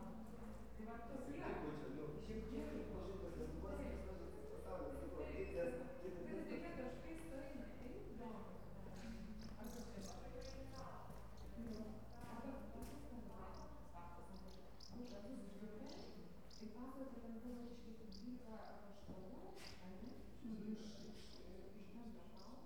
Petrašiūnai, Lithuania, monastery courtyard
in the courtyard of Pazaislis monastery.